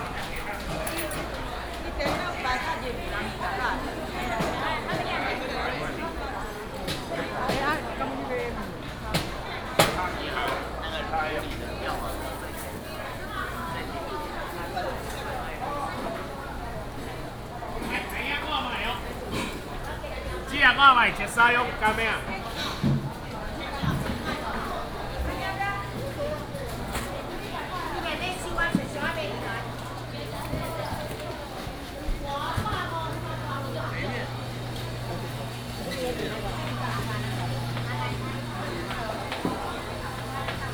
南門市場, Taoyuan Dist., Taoyuan City - Walking through traditional markets
Walking through traditional markets, Traffic sound